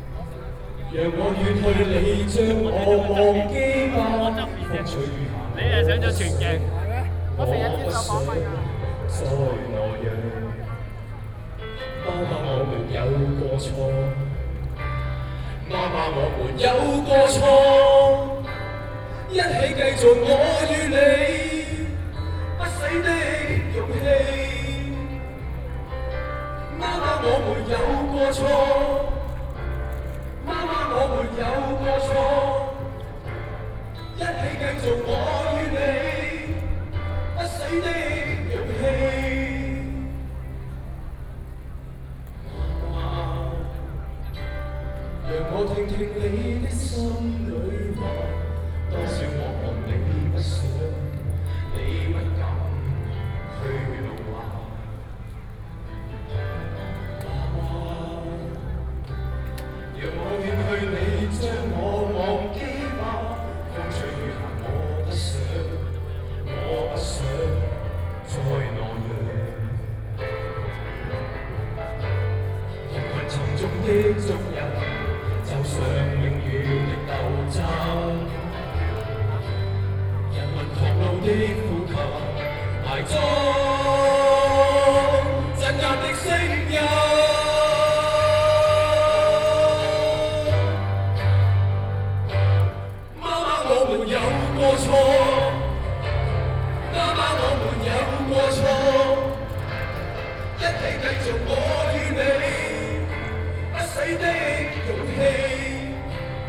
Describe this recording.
event activity of the Tiananmen Square protests, A Hong Kong students are singing, Sony PCM D50 + Soundman OKM II